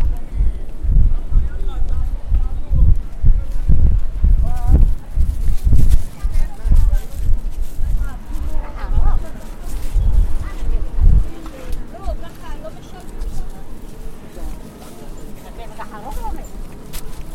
Female beggar shakes her coins' box at Mahane Yehuda central market next to Jaffa street where the light train appears every few minutes.
Jerusalem, Israel, 25 March 2015, 12:03